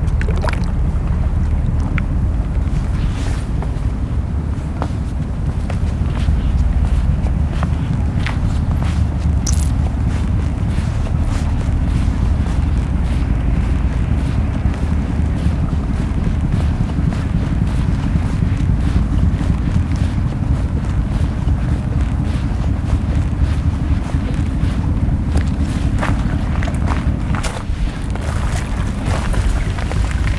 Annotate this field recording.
Walking on the pier close to the Oslo opera recording. The ferry going to Denmark on the other side of the harbour. Recording in a water dripping cave underneath the pier. Recorded with a Zoom H4n.